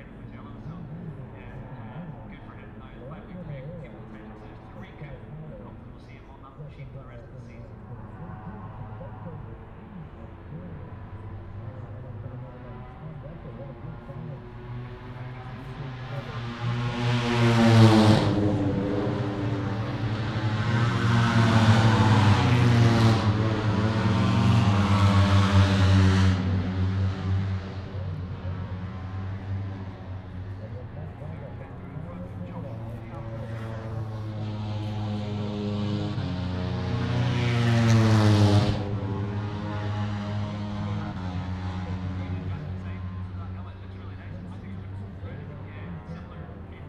{"title": "Silverstone Circuit, Towcester, UK - british motorcycle grand prix 2022 ... moto three ...", "date": "2022-08-05 13:15:00", "description": "british motorcycle grand prix 2022 ... moto three free practice two ... inside of maggotts ... dpa 4060s clipped to bag to zoom h5 ...", "latitude": "52.07", "longitude": "-1.01", "altitude": "157", "timezone": "Europe/London"}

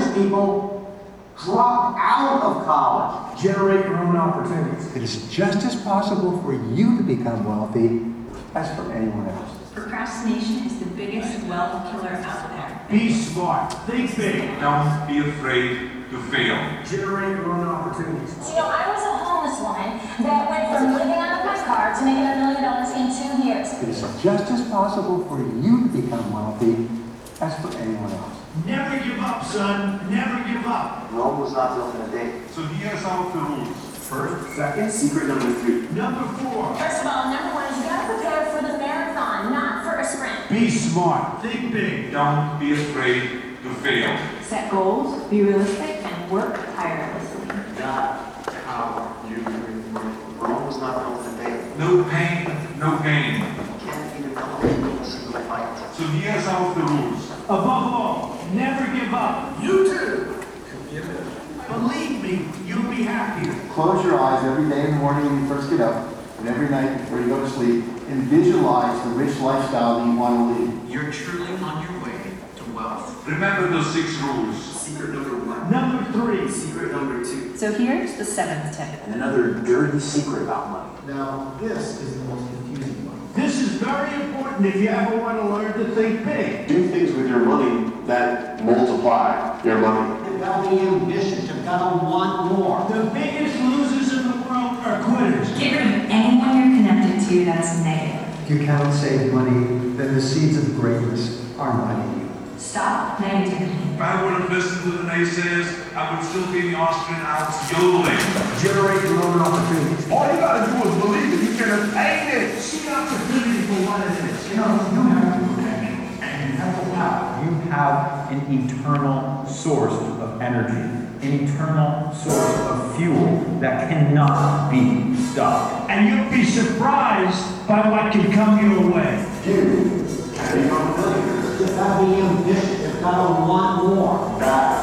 {"title": "Museumsquartier Wien, Österreich - voices in vienna", "date": "2012-11-18 18:37:00", "description": "a unknown soundinstallation in the public space - (pcm recorder olympus ls5)", "latitude": "48.19", "longitude": "16.38", "altitude": "201", "timezone": "Europe/Vienna"}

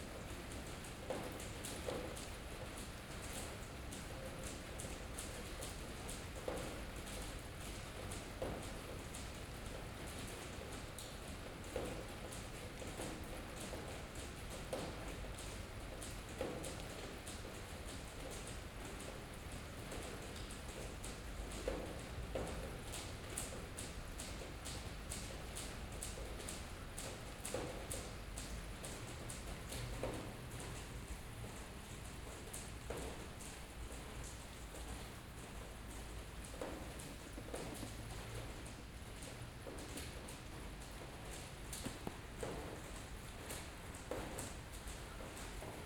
{"title": "Raining in A Coruña (Spain)", "description": "Raining in A Coruña recorded from a seventh floor. The microphone was pointing at a inner courtyard.", "latitude": "43.36", "longitude": "-8.40", "altitude": "13", "timezone": "Europe/Madrid"}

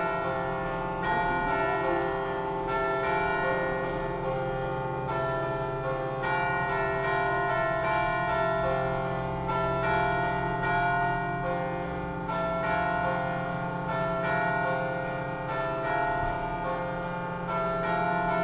{"title": "Place de Paris, Vaise - Lyon 9e, France - Cloche de lAnnonciation Lyon Vaise", "date": "2018-02-05 10:38:00", "description": "Volée de cloche de l'église de l'Annociation, Vaise, Lyon 9e arrondissement", "latitude": "45.78", "longitude": "4.80", "altitude": "169", "timezone": "Europe/Paris"}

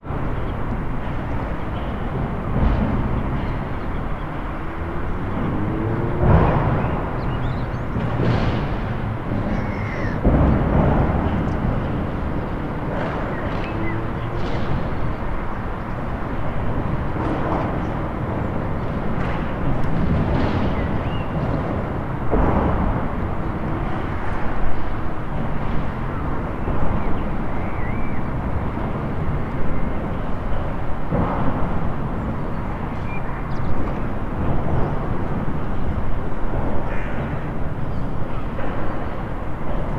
{"title": "Binckhorst, Laak, The Netherlands - Houthandel", "date": "2012-03-13 18:00:00", "description": "recorded with the sennheiser ME-66 and computer", "latitude": "52.06", "longitude": "4.34", "timezone": "Europe/Amsterdam"}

